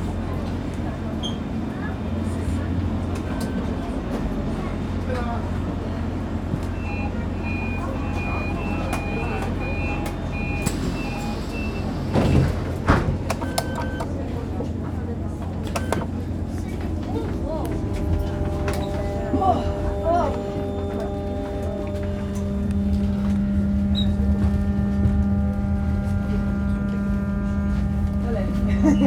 Koksijde, Belgium - This is the sound of SEA
Recording made during a walk from the trainstation to the beach of Oostduinkerke. recorded, edited and mixed by Eline Durt and Jelle Van Nuffel